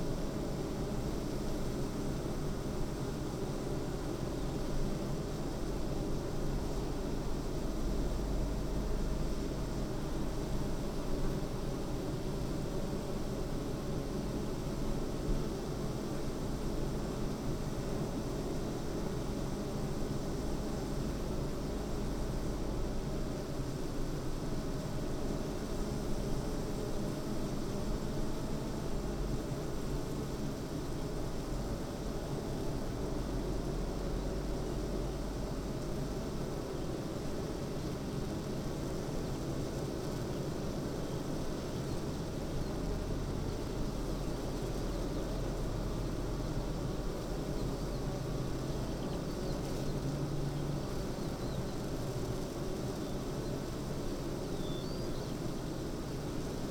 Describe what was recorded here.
bee hives ... eight bee hives in pairs ... the bees to pollinate bean field ..? produce 40lbs of honey per acre ..? xlr SASS to Zoom H5 ... bird song ... calls ... corn bunting ... skylark ...